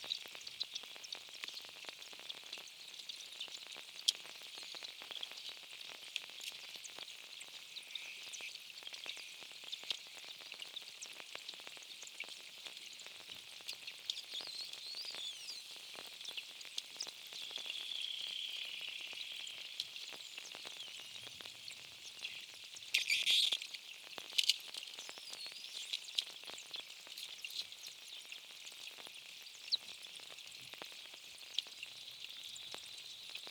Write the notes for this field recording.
Ice from glacier melting in lagoon. Recorded with two hydrophones